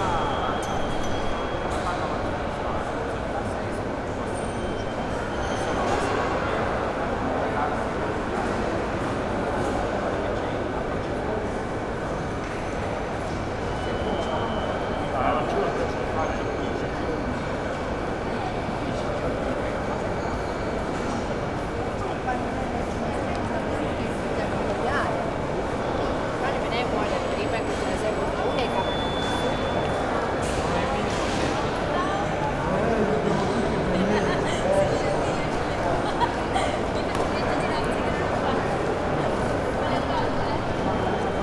catania airport - gate, checkin
catania airport, gates, ambiance